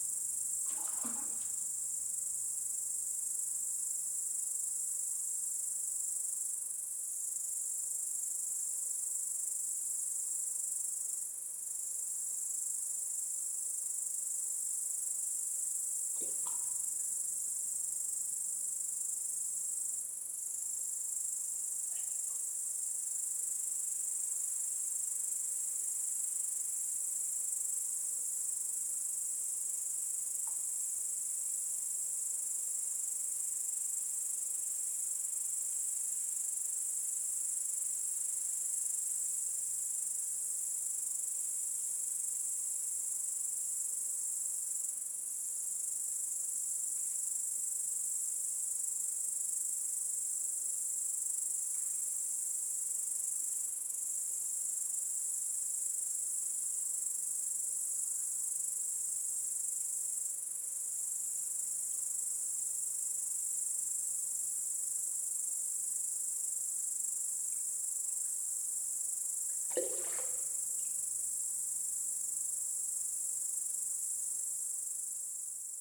WLD cicadas under a bridge, south Estonia
cicadas and an occasional fish sound under a bridge by the Ahja river